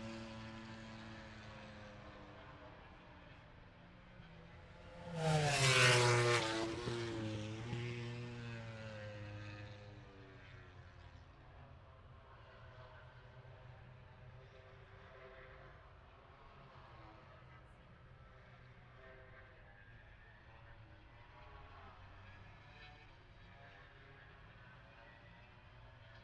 Silverstone Circuit, Towcester, UK - british motorcycle grand prix 2021 ... moto grand prix ...
moto grand prix free practice four ... wellington straight ... dpa 4060s to Zoom H5 ...